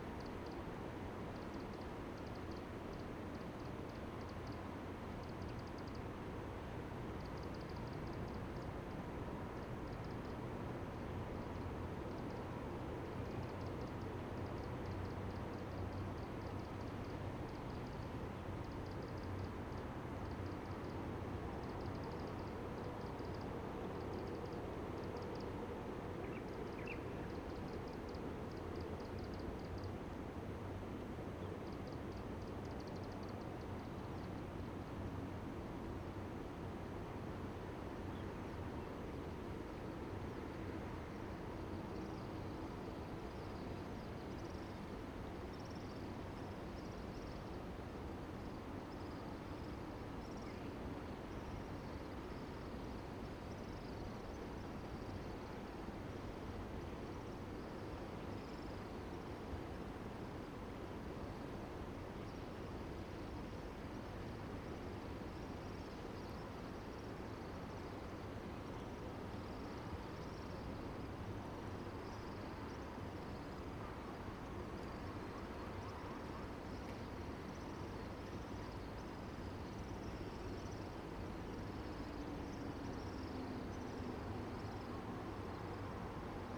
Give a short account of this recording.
stream sound, On the river bank, The distant train travels through, Dog barking, Bird call, Zoom H2n MS+XY